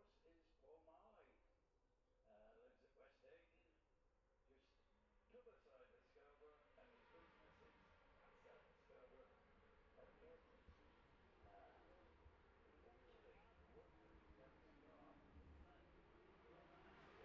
Jacksons Ln, Scarborough, UK - olivers mount road racing ... 2021 ...
bob smith spring cup ... `600cc heat 2 race ... dpa 4060s to MixPre3 ...